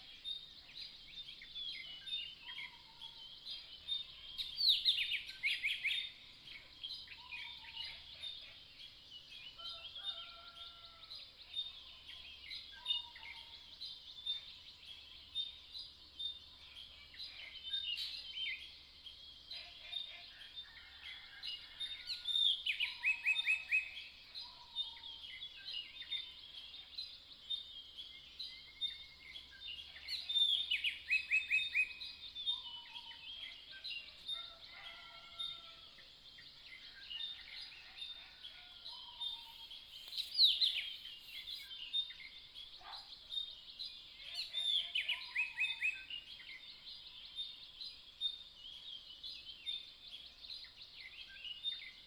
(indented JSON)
{
  "title": "綠屋民宿, 桃米里Puli Township - Birdsong",
  "date": "2015-04-29 05:22:00",
  "description": "Birdsong, Chicken sounds, Early morning, at the Hostel",
  "latitude": "23.94",
  "longitude": "120.92",
  "altitude": "495",
  "timezone": "Asia/Taipei"
}